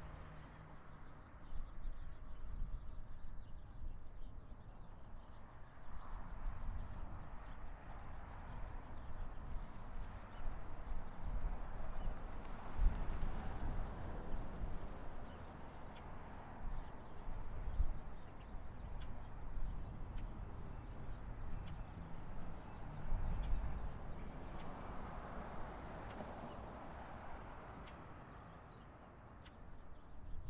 Ditmars Steinway, Queens, NY, USA - Birds In Trees With A Hilltop View of Laguardia Airport
Birds In Trees With A Hilltop View of Laguardia Airport
3 March 2017